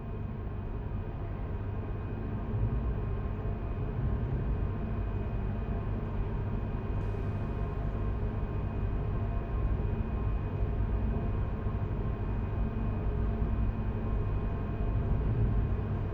Stadt-Mitte, Düsseldorf, Deutschland - Düsseldorf, Schauspielhaus, big stage
On the big stage of the theatre.
The sound of the stage and light ventilation. Some small accents by background steps and doors from the sideways.
This recording is part of the intermedia sound art exhibition project - sonic states